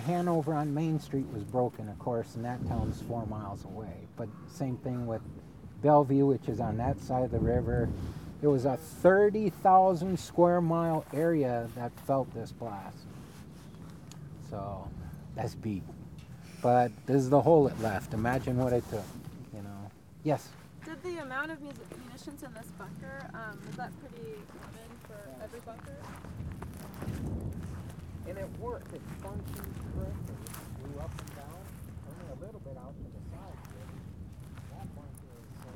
FWS Agent Alan Anderson explains the explosion which created this 50 foot crater in this landscape of munitions bunkers at the former Savanna Army Depot, which is slowly being decontaminated and turned into a wildlife refuge. University of Iowa graduate art students ans Sarah Kanouse in attendance with yours truly.